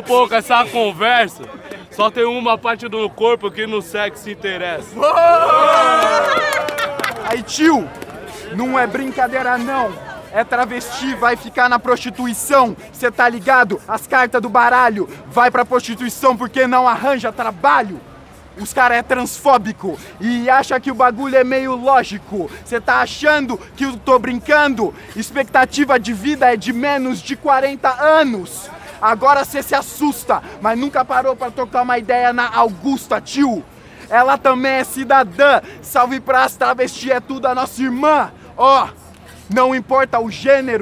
Av. Paulista, São Paulo - MCs Battle in Sao Paulo (Batalha Racional)
Batalha Racional on Avenida Paulista each Friday.
Recorded on 16th of March 2018.
With: Camoes, Koka, 247, Bone, Igao, Coiote, Skol, Neguinao, Kevao, Segunda Vida, Viñao Boladao, Luizinho, Danone, Fume...
Recorded by a MS Setup Schoeps CCM41+CCM8 on a cinela suspension/windscreen.
Recorder Sound Devices 633
- Bela Vista, São Paulo - SP, Brazil, 16 March